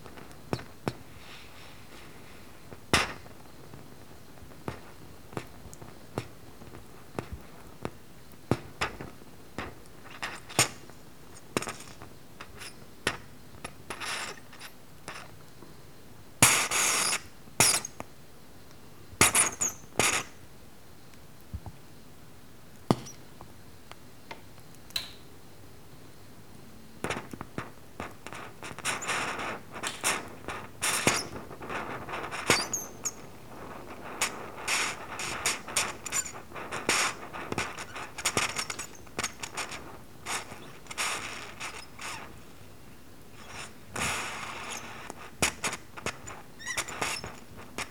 Poznan, Mateckiego street, kitchen - oatmeal in progress
again intrigued how different meals sound while cooking. oatmeal. mush keeps puffing with sharp bursts as the air sacks are released.